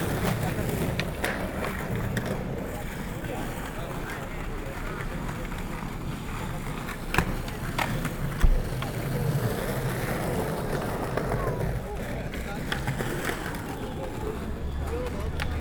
Porto, Praça da Batalha
skaters at Praça da Batalha, porto
Oporto, Portugal, October 2010